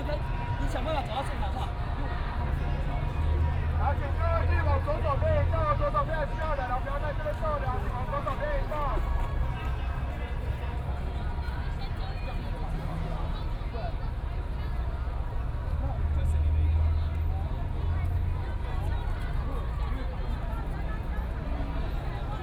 Student activism, Walking through the site in protest, People and students occupied the Executive Yuan
Zhongzheng District, Taipei City, Taiwan, 23 March, 21:26